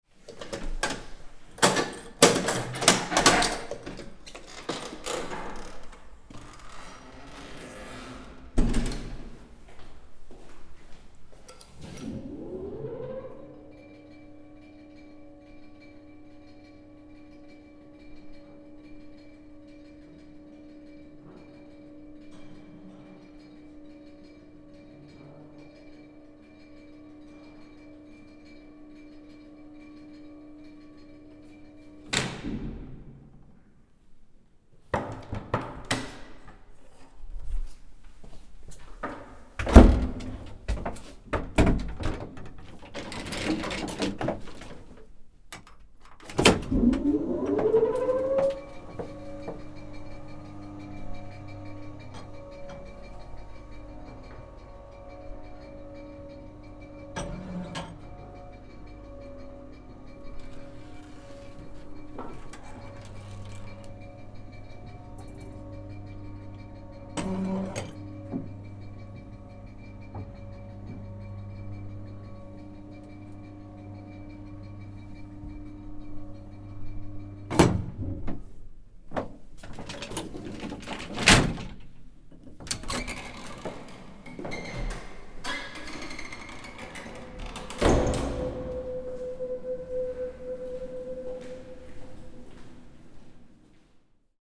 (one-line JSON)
{"title": "bul. Stefan Stambolov, Sofia Center, Sofia, Bulgarien - Elevator Sound", "date": "2018-04-26 10:05:00", "description": "From the 6th floor to the ground with Tascam DR-44WL", "latitude": "42.70", "longitude": "23.32", "altitude": "544", "timezone": "Europe/Sofia"}